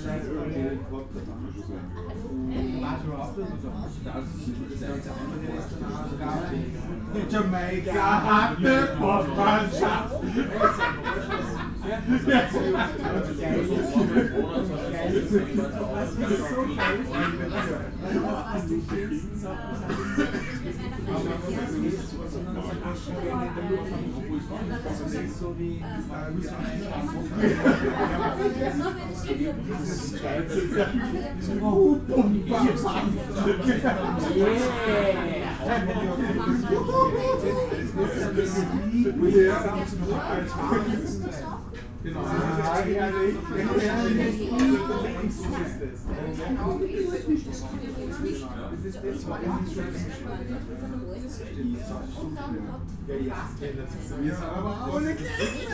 {"title": "Linz, Österreich - granit linzer bierstube", "date": "2015-01-02 23:49:00", "description": "granit linzer bierstube, pfarrgasse 12", "latitude": "48.31", "longitude": "14.29", "altitude": "268", "timezone": "Europe/Vienna"}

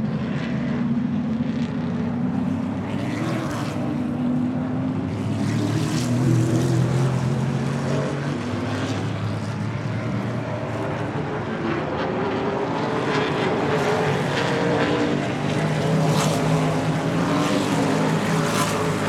{"title": "Madison International Speedway - ARCA Midwest Tour Practice", "date": "2022-05-01 11:26:00", "description": "Practice for the Joe Shear Classic an ARCA Midwest Tour Super Late Model Race at Madison International Speedway. There were 29 cars which came out for practice in groups of 5-10", "latitude": "42.91", "longitude": "-89.33", "altitude": "286", "timezone": "America/Chicago"}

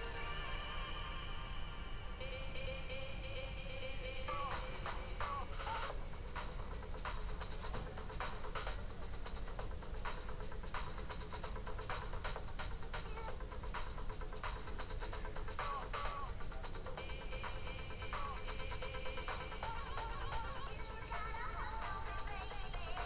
{"date": "2021-04-01 23:51:00", "description": "\"Round Midnight Easter Friday on terrace with radio in the time of COVID19\": soundscape.\nChapter CLXV of Ascolto il tuo cuore, città. I listen to your heart, city\nThursday April 1st 2021. Fixed position on an internal terrace at San Salvario district Turin, One year and twenty-two days after emergency disposition due to the epidemic of COVID19. Portable transistor radio tuned on RAI RadioTre.\nStart at 11:51 p.m. end at 00:11 a.m. duration of recording 20’12”", "latitude": "45.06", "longitude": "7.69", "altitude": "245", "timezone": "Europe/Rome"}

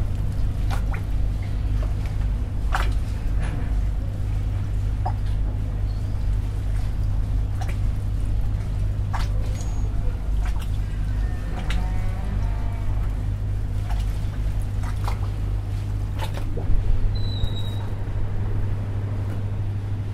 {"title": "Princes Wharf, Fullers Cruises Ferry Terminal", "date": "2010-09-28 14:40:00", "description": "The sound of water hitting a boat in repair", "latitude": "-36.84", "longitude": "174.77", "altitude": "1", "timezone": "Pacific/Auckland"}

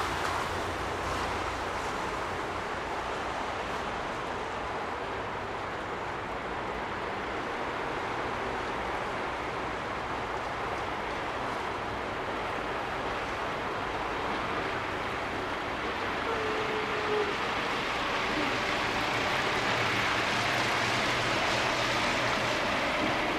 {"title": "Ленинский пр-т., Москва, Россия - Ordzhonikidze street", "date": "2020-01-29 22:02:00", "description": "The beginning of Ordzhonikidze street. You can hear cars passing through puddles, snow melting, and water dripping from the roofs. Warm winter.", "latitude": "55.71", "longitude": "37.59", "altitude": "148", "timezone": "Europe/Moscow"}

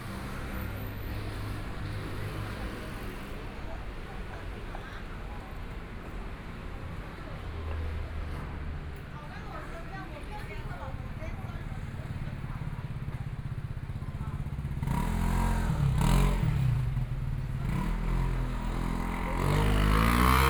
{"title": "台北市中山區朱園里 - walking on the small Road", "date": "2014-02-06 17:09:00", "description": "Environmental sounds, Motorcycle sound, Traffic Sound, Binaural recordings, Zoom H4n+ Soundman OKM II", "latitude": "25.05", "longitude": "121.53", "timezone": "Asia/Taipei"}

